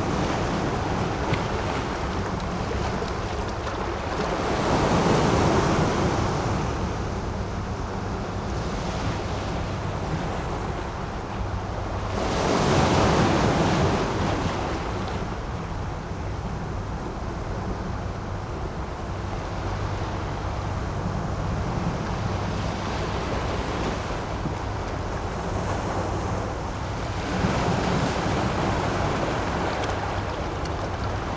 南灣頭, Bali Dist., New Taipei Cit - the waves
the waves, traffic sound
Sony PCM D50
New Taipei City, Taiwan